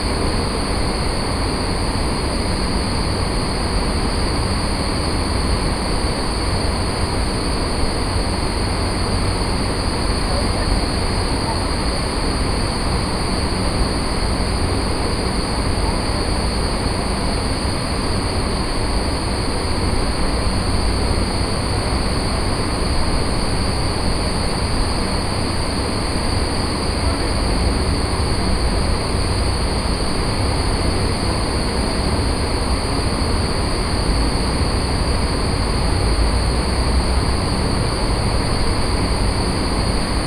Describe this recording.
Norway, Oslo, Oslo opera house, Air Conditioning, Den Norske Opera & Ballett, Binaural